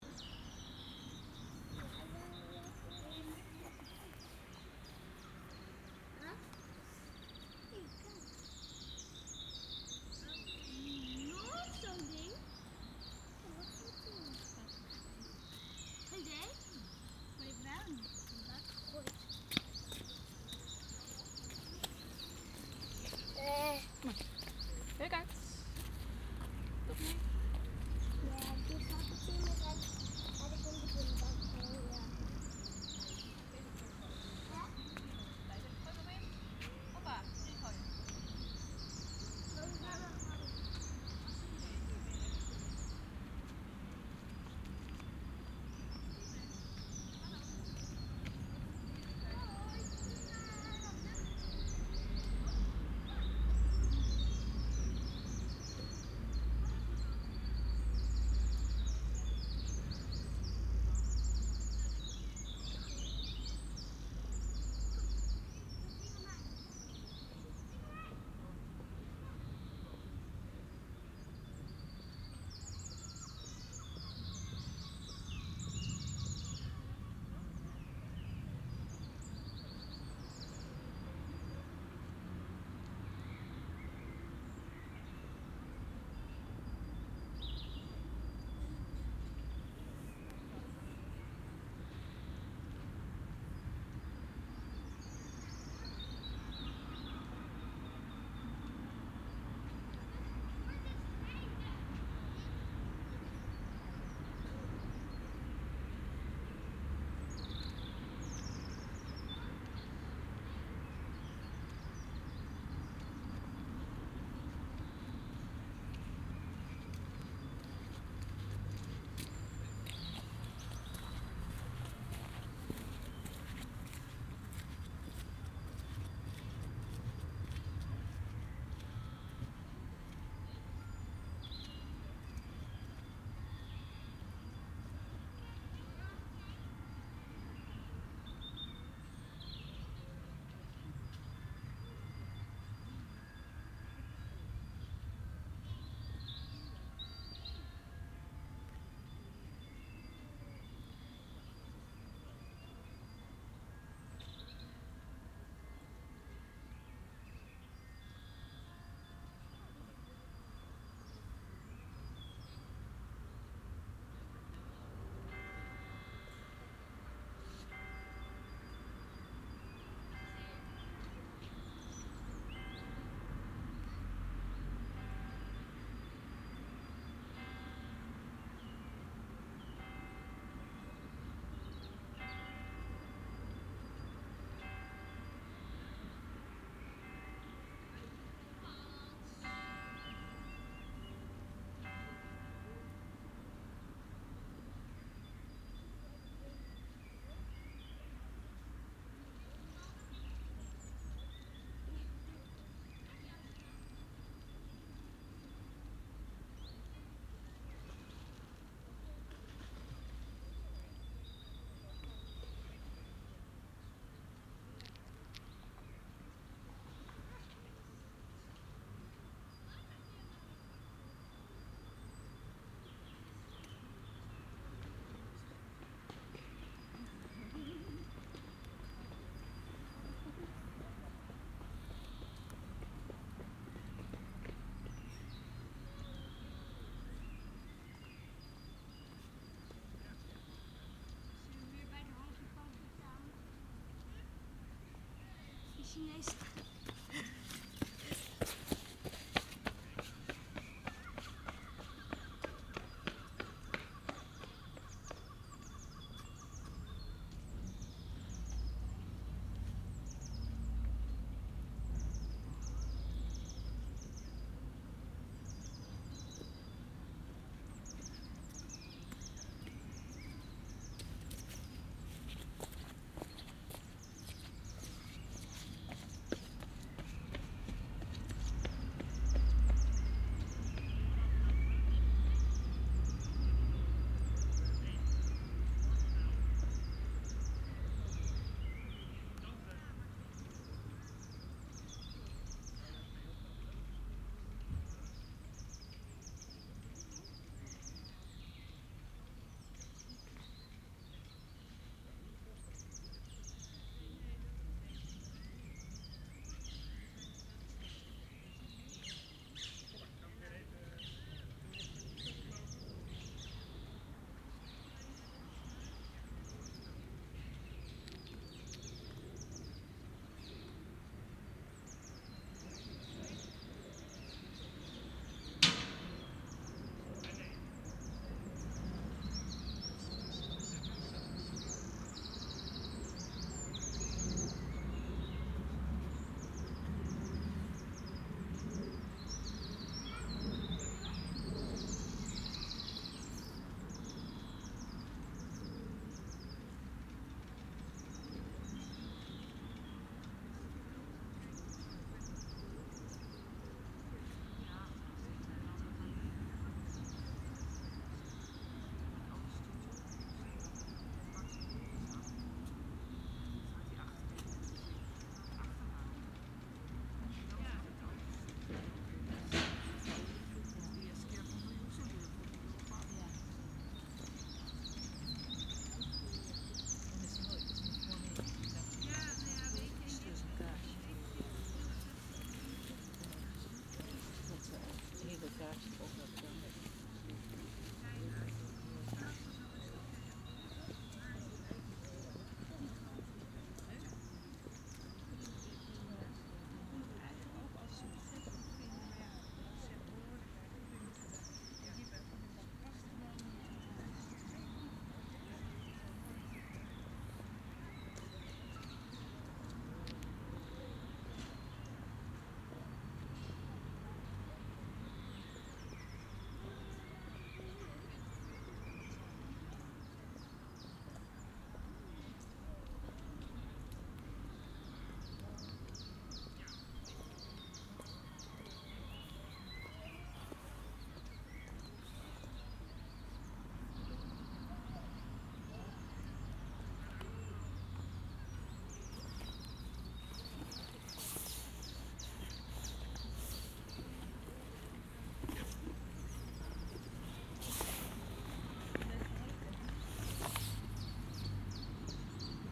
Leiden, Nederland - Von Siebold Memorial Garden (Hortus Botanicus, Leiden)
The Von Siebold Memorial Garden in the Hortus Botanicus in Leiden.
Binaural recording.
Leiden, Netherlands, 10 April 2016, ~12:00